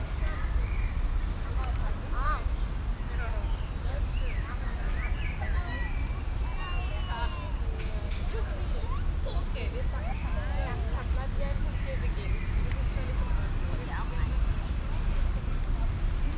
cologne, stadtgarten, vordere wiese, nachmittags
auf vorderer parkwiese, nahe biergarten, nachmittags
project: klang raum garten/ sound in public spaces - in & outdoor nearfield recordings